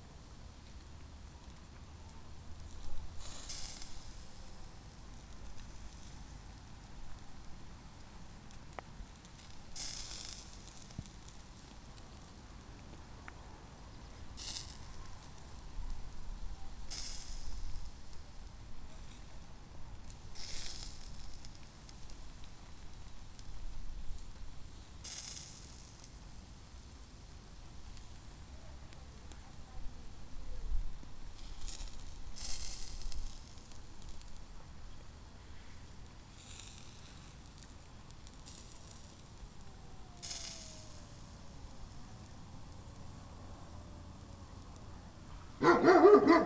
Utena, Lithuania, shashlyk baking
evening in the yard...shashlyk baking..dog
18 July 2012, 20:10